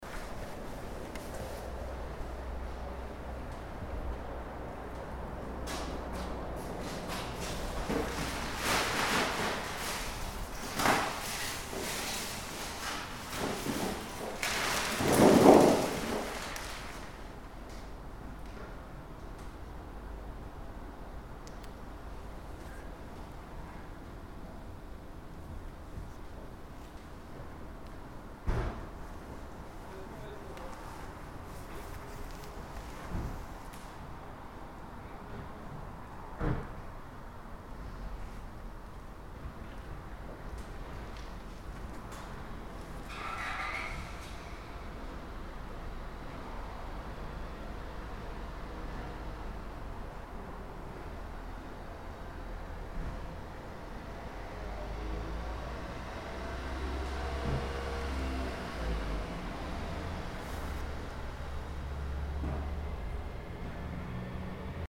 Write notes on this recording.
Listerning to recycling #WLD2018